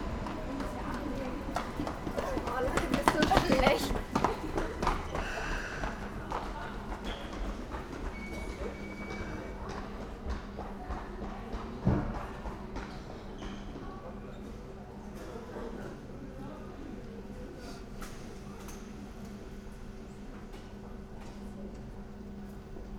Subway station Rathaus
Sony PCM D50
Germany, 5 December 2013, 13:00